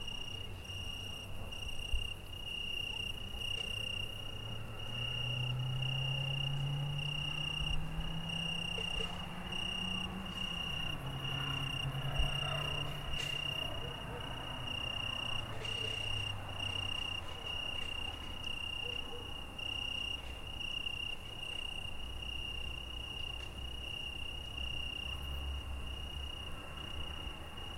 Carrapatelo, Portugal - Barragem do Carrapatelo - Noite
Paisagem sonora noturna na Barragem do Crrapatelo. Portugal Mapa Sonoro do Rio Douro. Night Soundscape at Carrapatelo. Portugal. Mapa Sonoro do rio Douro.